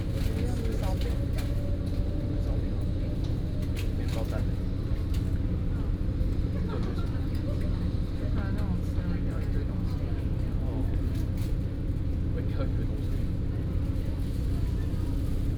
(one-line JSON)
{"title": "臺中火車站, Central Dist., Taichung City - In the station platform", "date": "2016-09-06 17:55:00", "description": "In the station platform", "latitude": "24.14", "longitude": "120.69", "altitude": "81", "timezone": "Asia/Taipei"}